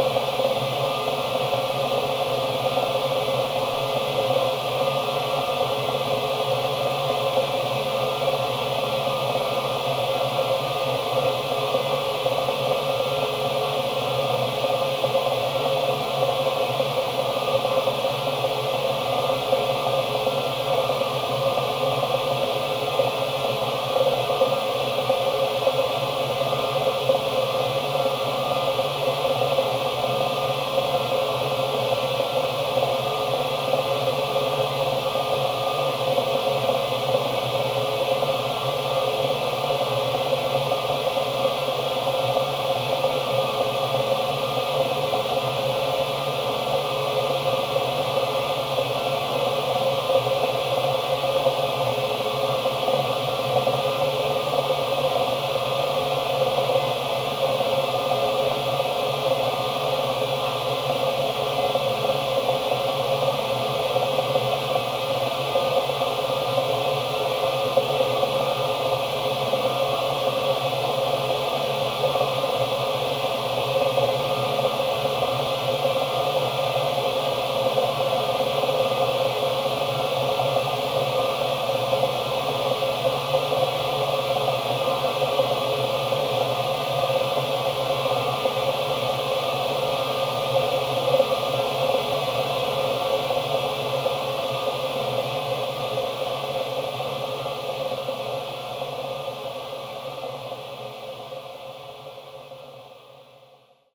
Court-St.-Étienne, Belgique - Gas treatment
This plant is a place where odour is added to the gas. Normally, the gas has no special odour ; to be detected by people in case of emergency, an odour is artificially added. The sound is a very high pressure gas pipe.